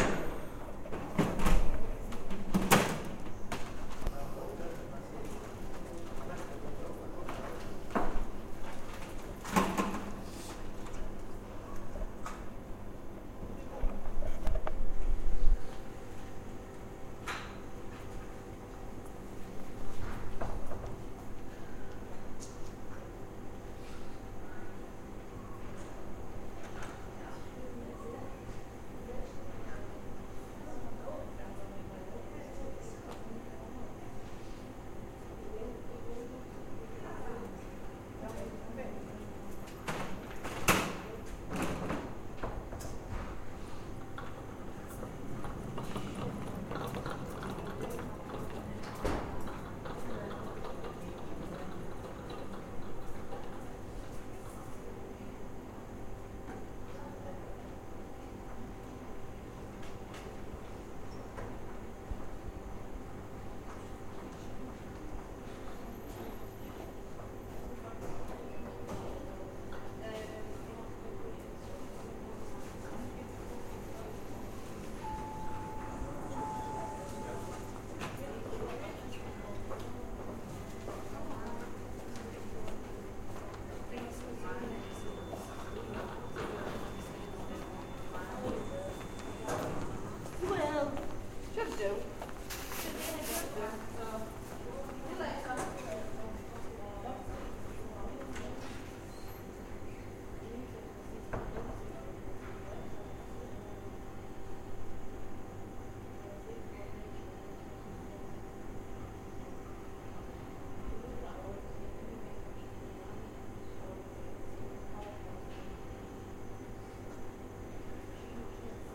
Sounds in main corridor of the Royal Hallamshire Hospital in Sheffield near main lifts.